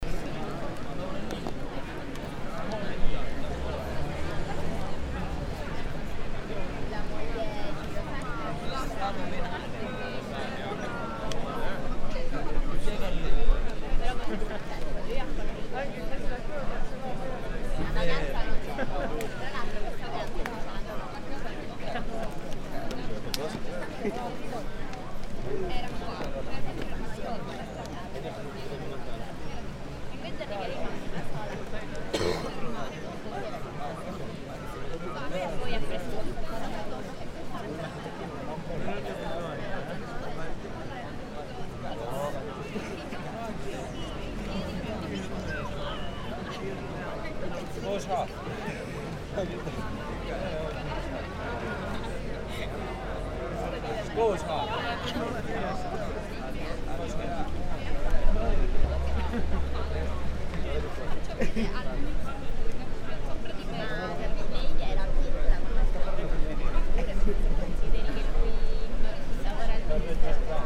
Perugia, Italy - restorants of corso vannucci
outdoor restorant with people eating and walking around, shops, turists.
22 May, 18:12